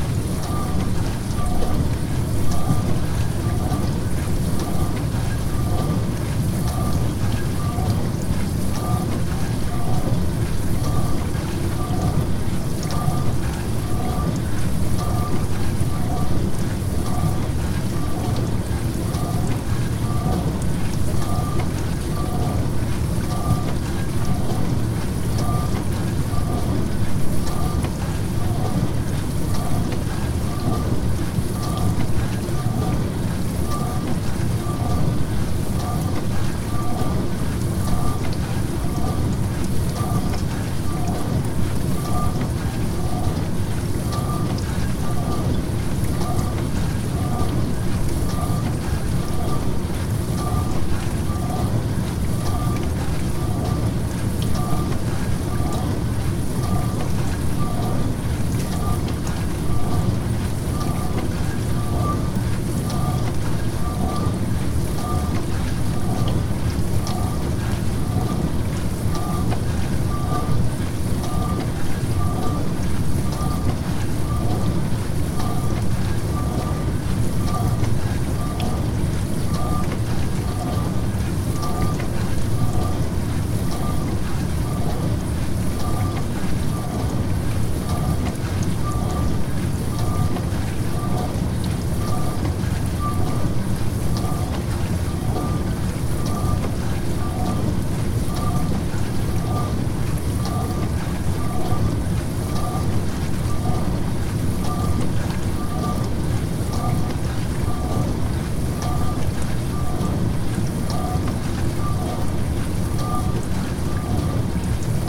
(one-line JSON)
{"title": "Różana, Siemianowice Śląskie, Polska - Dishwasher", "date": "2019-04-30 22:00:00", "description": "Dishwasher sounds\nTascam DR-100 (UNI mics)", "latitude": "50.31", "longitude": "19.04", "altitude": "276", "timezone": "GMT+1"}